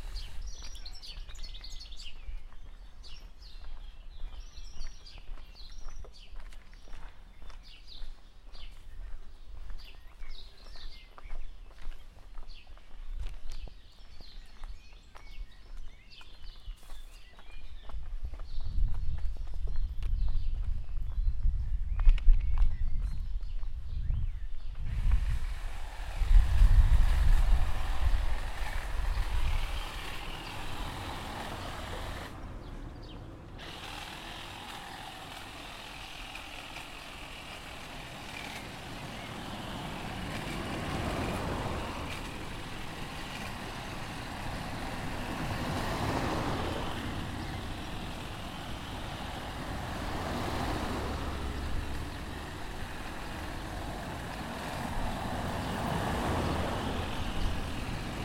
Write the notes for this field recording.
A man using a chainsaw in his garden. Cars passing in the street, Recorded with a Zoom H1n.